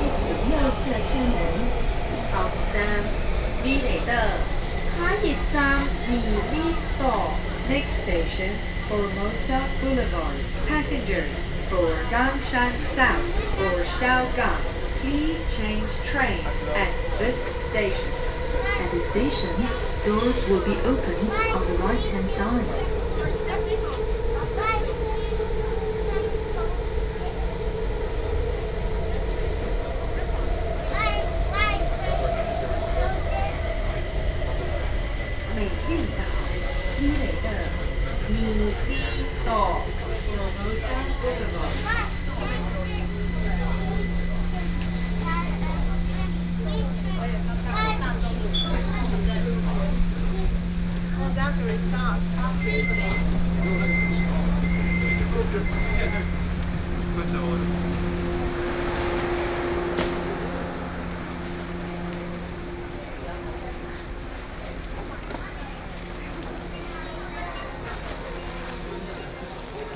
Cianjin District, Kaoshiung - inside the Trains
inside the MRT train, Sony PCM D50 + Soundman OKM II
高雄市 (Kaohsiung City), 中華民國, 20 April 2013, ~19:00